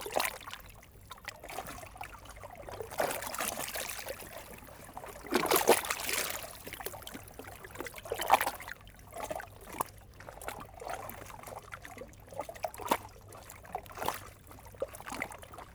Croissy-Sur-Seine, France - River Seine
Recording of the quiet river Seine near the Bougival sluice.